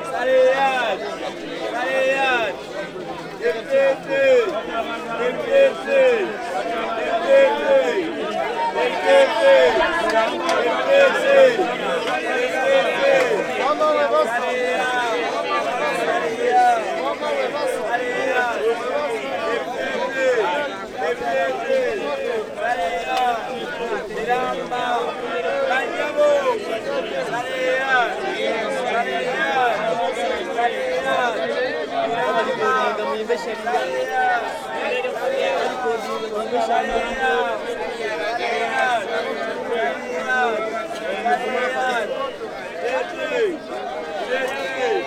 owinomarket, Kampala, Uganda - owino in
people selling used clothes from all over the world for 2000 ugandashilling, recorded with a zoom h2